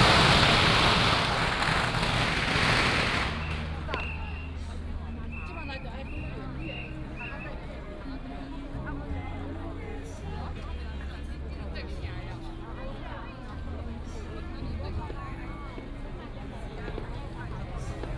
Matsu Pilgrimage Procession, Crowded crowd, Fireworks and firecrackers sound, Traditional temple fair